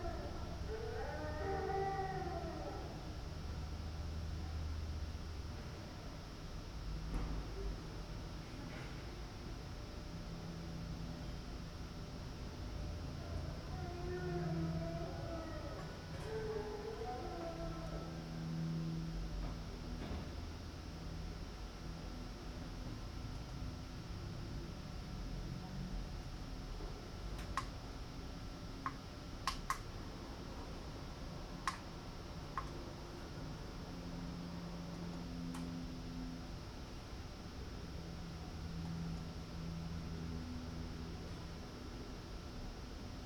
Ascolto il tuo cuore, città. I listen to your heart, city. Several chapters **SCROLL DOWN FOR ALL RECORDINGS** - Round noon with plane, howling dog and bells in the time of COVID19 Soundscape
"Round noon with plane, howling dog and bells in the time of COVID19" Soundscape
Chapter CXXVIII of Ascolto il tuo cuore, città. I listen to your heart, city
Thursday, August 27th, 2020. Fixed position on an internal terrace at San Salvario district Turin five months and seventeen days after the first soundwalk (March 10th) during the night of closure by the law of all the public places due to the epidemic of COVID19.
Start at 11:49 a.m. end at 00:11 p.m. duration of recording 30'00''